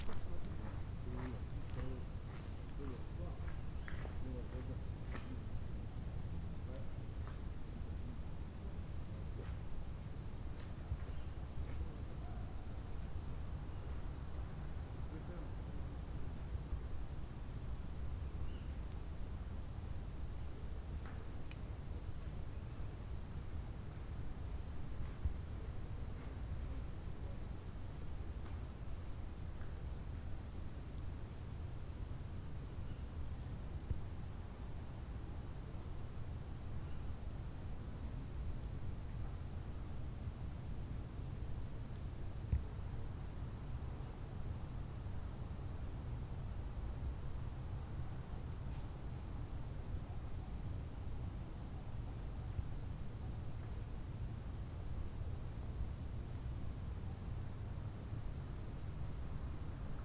Vinarje, Maribor, Slovenia - corners for one minute
one minute for this corner: Vinarje